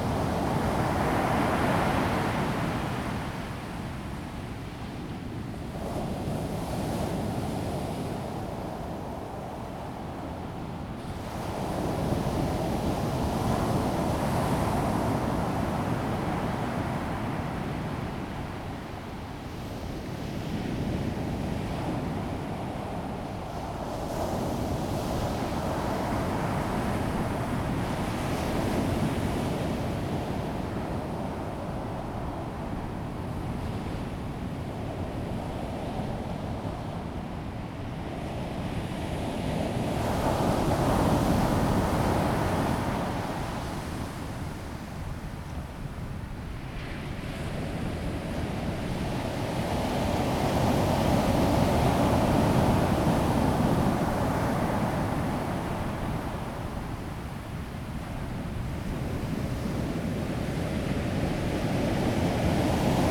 on the beach, Sound of the waves, Wind
Zoom H2N MS+ XY